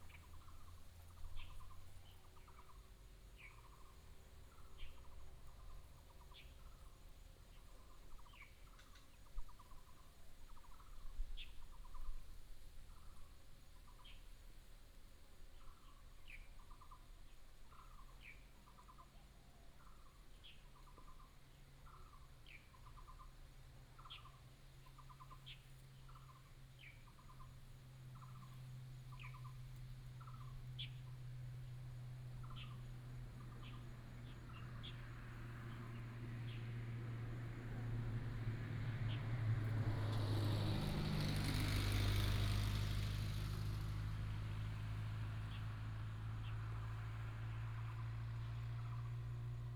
Nanshan Rd., Manzhou Township - Beside a mountain road
Bird cry, Traffic sound, Beside a mountain road